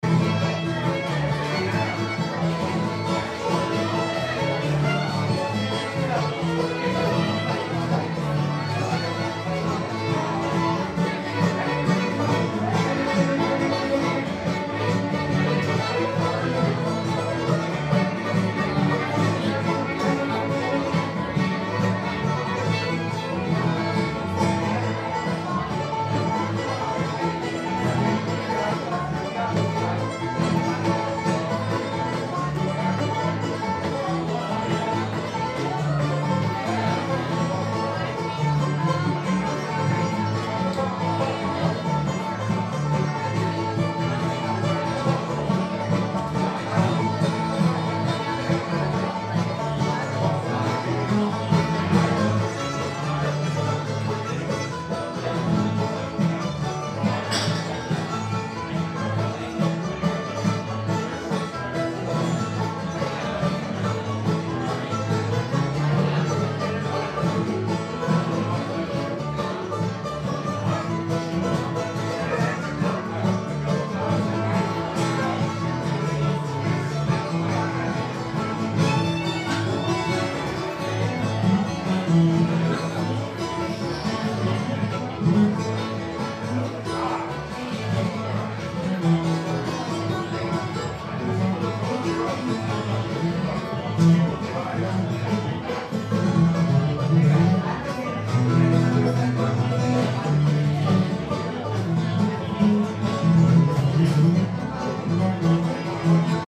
The Albatros pub - Berkeley + live country music 2
The Albatros pub in Berkeley with live country music